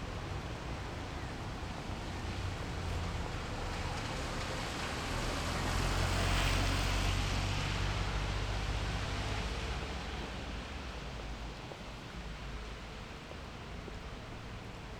Berlin: Vermessungspunkt Friedelstraße / Maybachufer - Klangvermessung Kreuzkölln ::: 14.08.2010 ::: 12:29
14 August 2010, 12:29